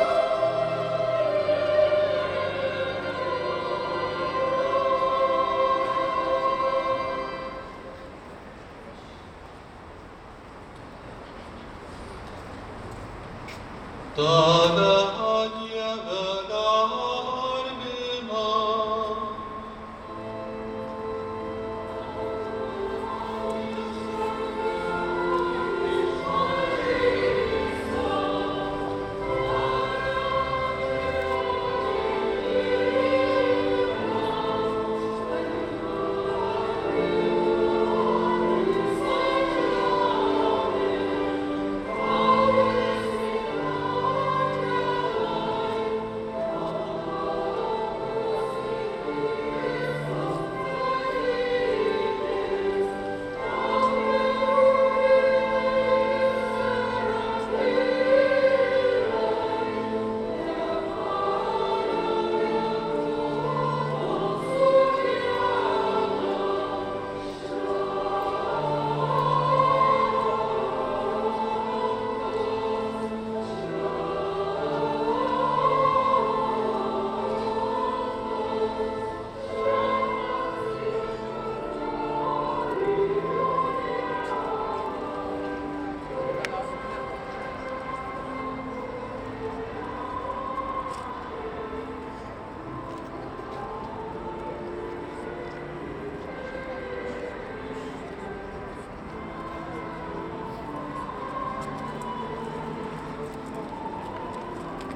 Easter Procession at Cathedral Sq, Vilnius, bells, orchestra, crowd talks
easter, procession, church bells, capital, liturgy, priest, orchestra, crowd, Vilnius